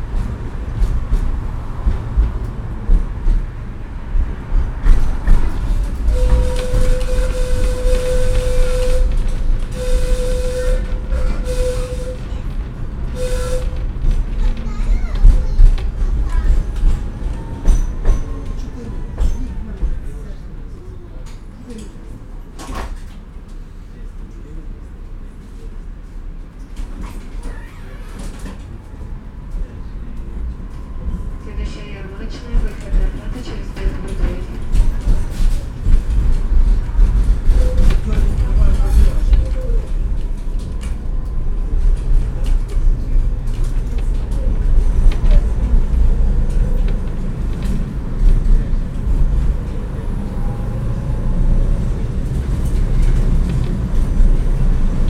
Suvorovs'kyi district, Odessa, Odessa Oblast, Ukraine - Tram ride through industrial zone
16 August 2016